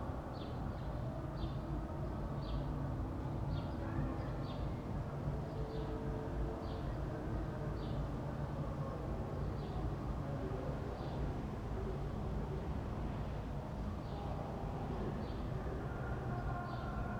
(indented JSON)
{
  "title": "Berlin Bürknerstr., backyard window - mayday evening, sound of nearby demonstration",
  "date": "2009-05-01 20:00:00",
  "description": "01.05.2009 20:00",
  "latitude": "52.49",
  "longitude": "13.42",
  "altitude": "45",
  "timezone": "Europe/Berlin"
}